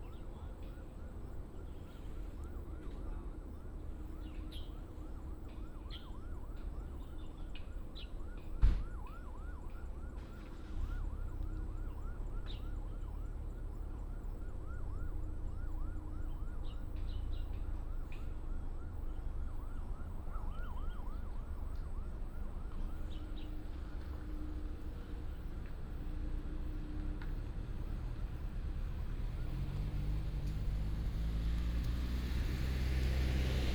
東三湖, 三湖村 Xihu Township - next to the high-speed railway
A variety of birds call, traffic sound, next to the high-speed railway, Binaural recordings, Sony PCM D100+ Soundman OKM II